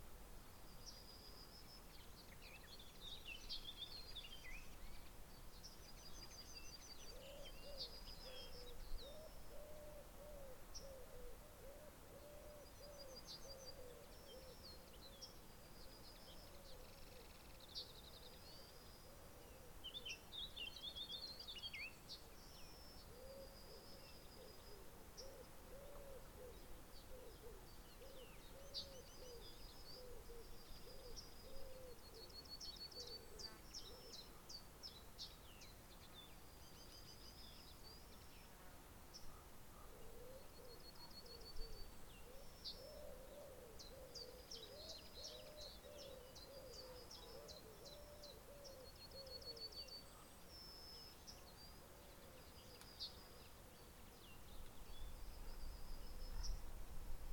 This recording was taken using a Zoom H4N Pro. It was recorded at North Wood part of the Forestry England Haldon Forest but this area is seldom visited although there is an occasional pheasant shoot here. Insects can be heard on the brambles and scrubby edges of the forest tracks and birds including a raven in the distance, can be heard. This recording is part of a series of recordings that will be taken across the landscape, Devon Wildland, to highlight the soundscape that wildlife experience and highlight any potential soundscape barriers that may effect connectivity for wildlife.